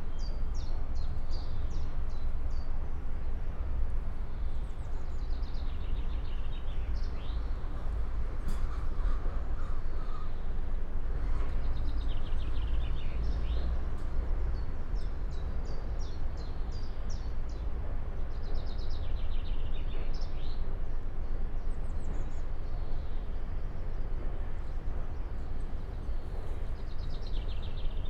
Niederaußem, Auenheim - alongside power plant
slow walk alongside the Niederaußem power station, ambience, plant hum, almost no people or cars on this tuesday morning.
(tech: SD702, DPA4060)